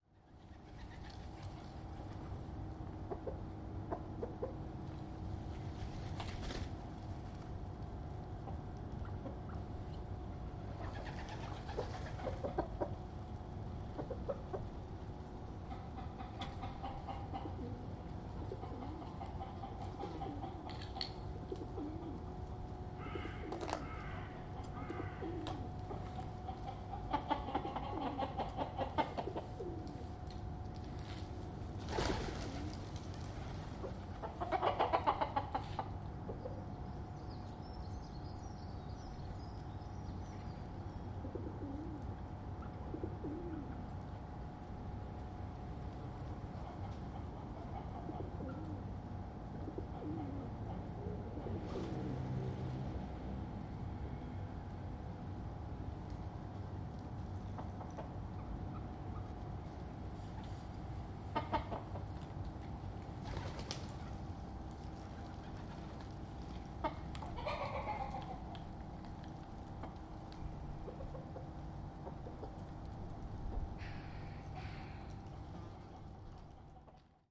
Binckhorst Den Haag, Netherlands - Chickens & Pigeons
Wild chickens live in the industrial area of the Binckhorst, Den Haag. Here they hang out on the street with some pigeons.
Zoom HnN Spatial Audio (Binaural decode)